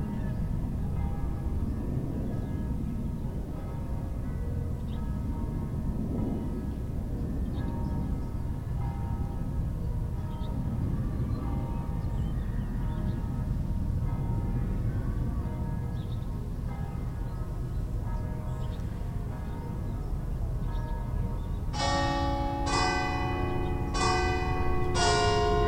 Venice, Italy - campane-glocken-bells
mittagsglocken am dorsoduro/ campane di mezziogiorna a dorsoduro / bells at noon